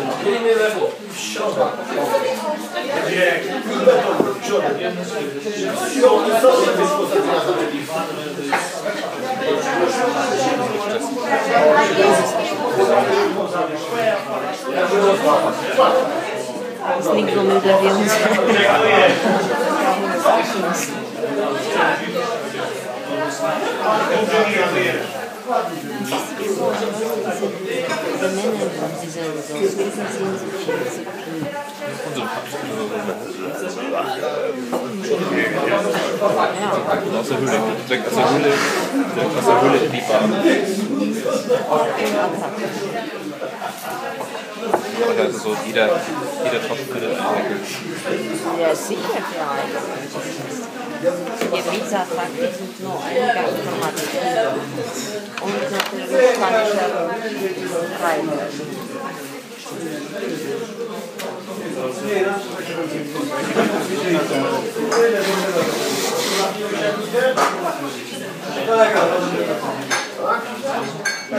PTTK Turbacz, Gorce mountains, Poland - Morning at the breakfast
Lots of laughter - people are happy because the sun came out first time this winter.